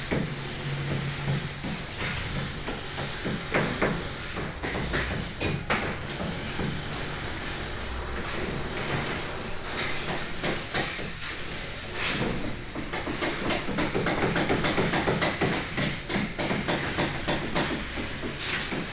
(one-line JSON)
{"title": "Beitou, Taipei - Being renovated house", "date": "2012-10-04 11:09:00", "latitude": "25.14", "longitude": "121.49", "altitude": "23", "timezone": "Asia/Taipei"}